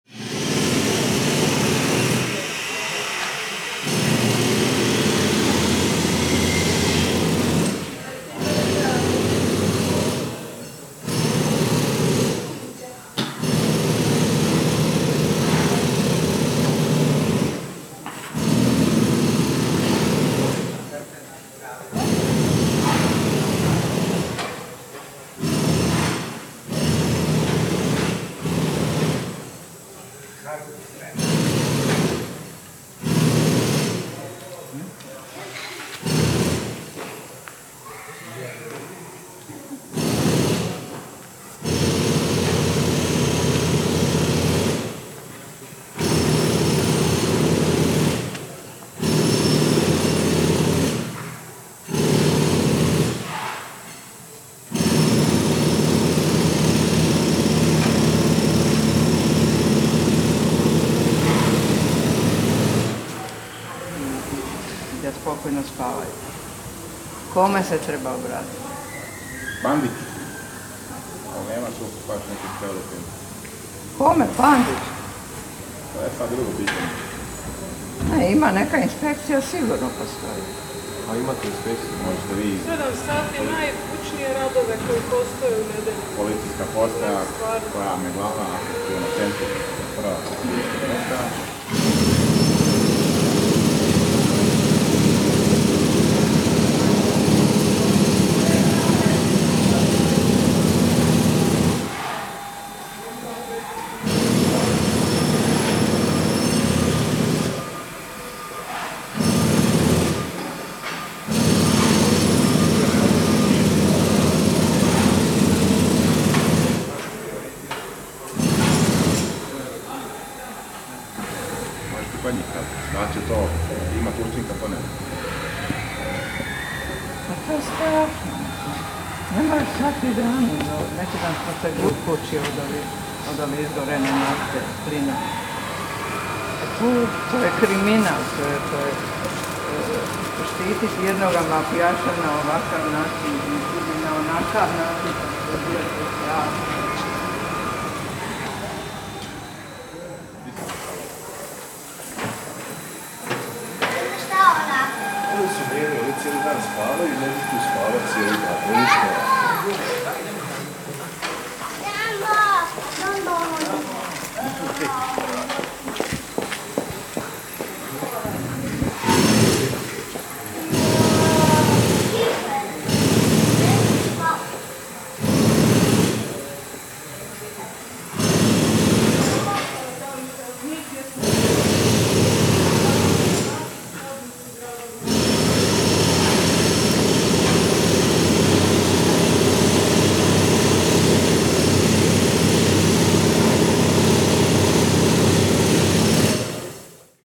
Zagreb, Varsavska - destruction of a pedestrian zone
borers, reactions of rare pedestrians
City of Zagreb, Croatia, 25 July